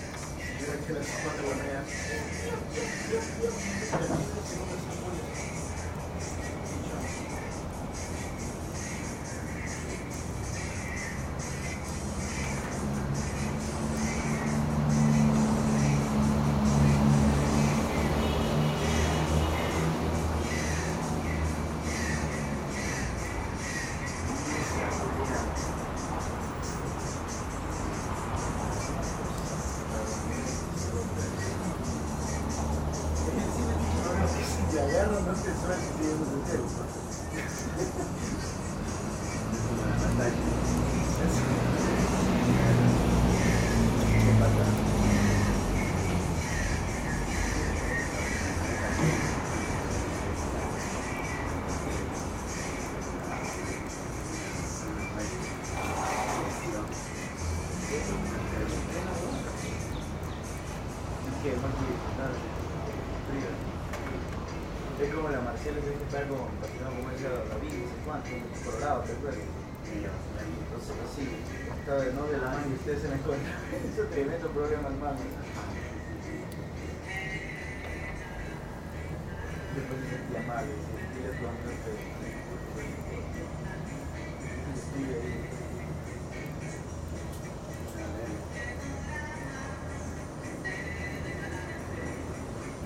Ciudadela Bellavista, Guayaquil, Ecuador - From JML house
While waiting for lunch I decided to point the mics to the outdoor while me and friends talked about stuff. TASCAM DR100